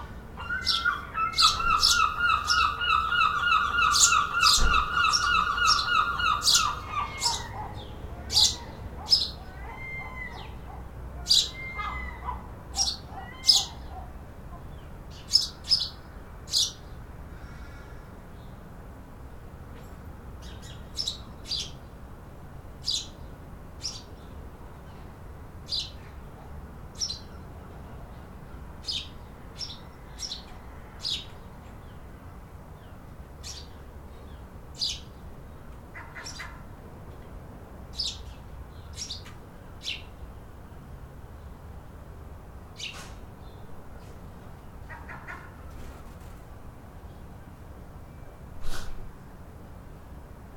Falmouth, Cornwall, UK - Birds in my garden
Gulls young and old in my back garden, slightly processed
Zoom H6n XY mic
12 January 2014, 14:43